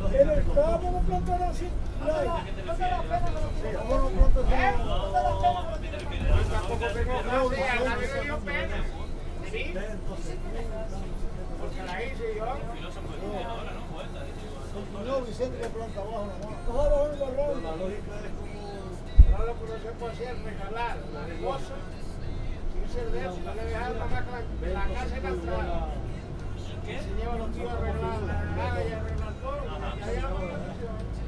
Santa Cruz de Tenerife, Anaga mountains - bus into Anaga Mountains
on a bus traveling into the Anaga Mountains. The passengers and the driver got into a very intense conversation, as every day I assume. (sony d50)